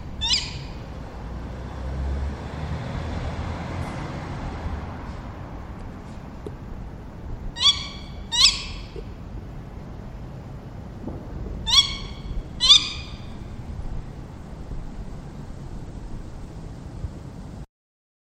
City Owl
Tawny Owl (Strix aluco)
Zoom H2 recorder, internal mics.
The Hague, The Netherlands, 21 June 2009